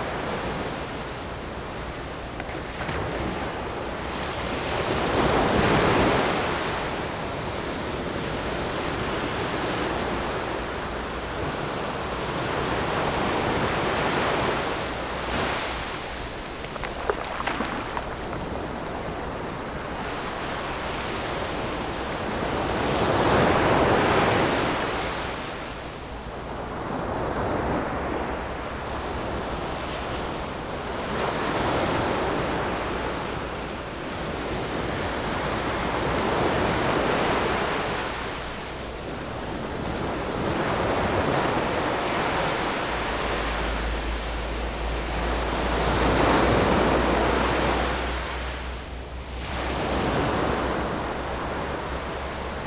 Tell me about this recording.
Sound of the waves, Very hot weather, In the beach, Zoom H6+ Rode NT4